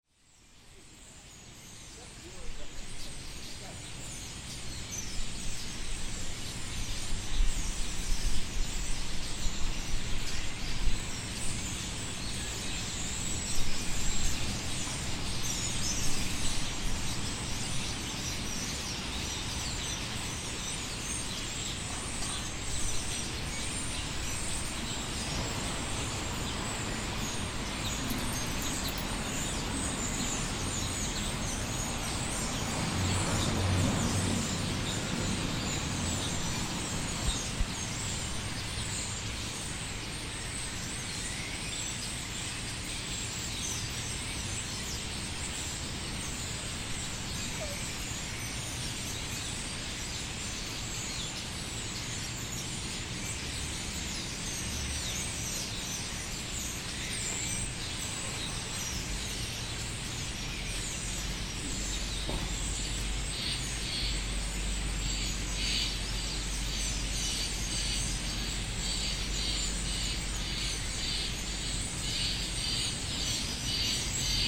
Mount Vernon, Baltimore, MD, USA - Birds at Peabody
Recording of birds conversing in the evening outside of George Peabody library, Captured with a Zoom H4n Pro.